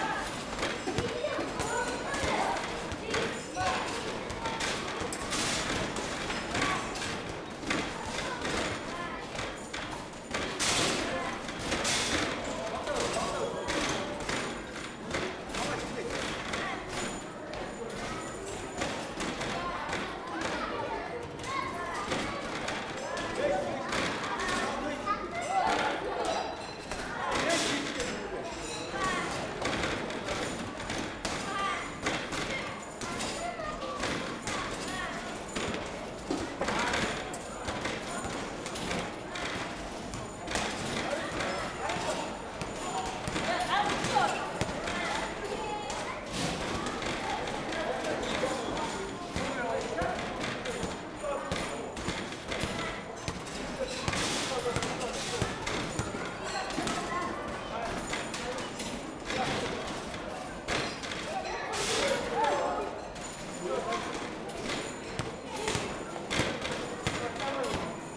National amusement park, Ulaanbaatar, Mongolei - ball game
basket ball, quite popular in UB here in the amusement park as machine